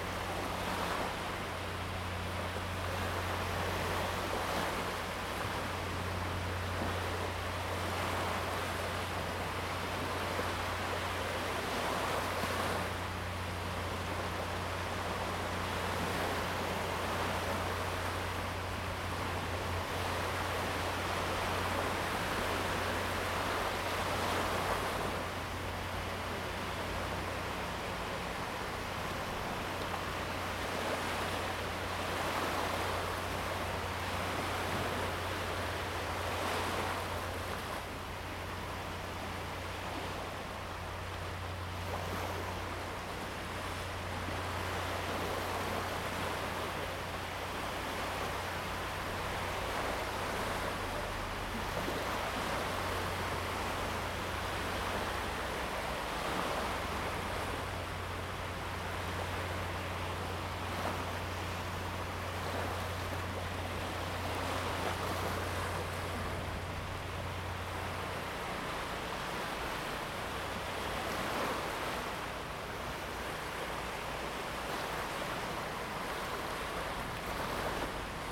Polska - Intrusion
Waves at Stogi beach, disturbed by the constant hum of the nearby cargo terminal